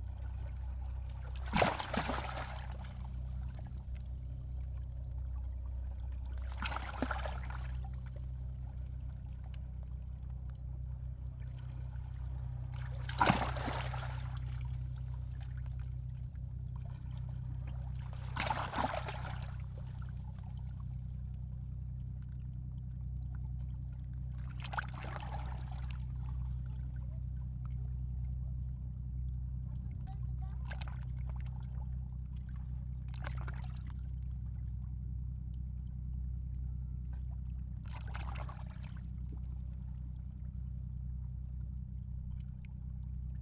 Georgioupoli, Crete, amongst the stones
small microphones amongst the jetty stones